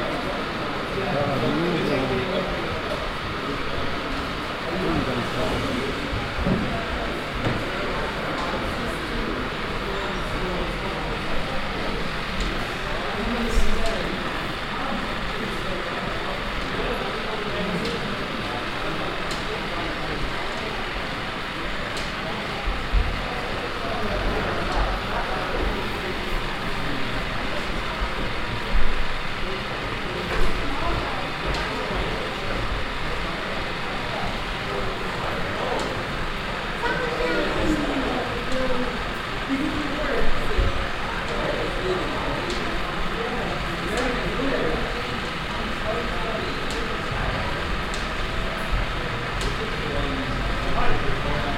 amsterdam, paradiso, foyer
inside the concert club paradiso, at the foyer - the sound of a light box installation and visitors at the performance night I like to watch too Julidans 2010
international city scapes - social ambiences and topographic field recordings